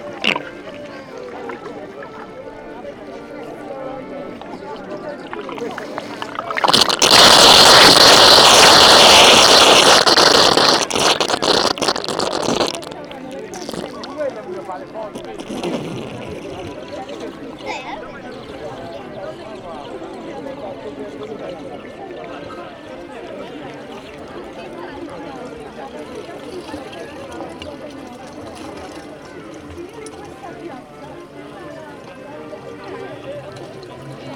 Stare Miasto, Warszawa, Pologne - Fontanna warszawskiej Syrenki
Fontanna warszawskiej Syrenki w Rynek Starego Miasta
Warsaw, Poland